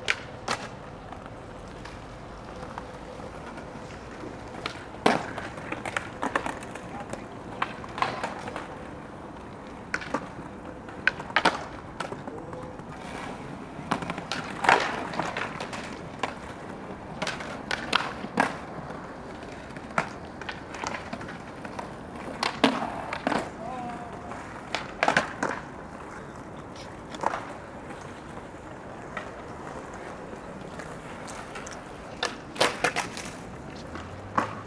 The Metronome in Letna Park is a topsy-turvy pendulum, enthroned over and giving a pace to the city. When in this place they started blowing up a large row of soviet leader statues, one at a time, the free space was quickly seized by skateboarding youth. And the Metronome goes swinging on and on.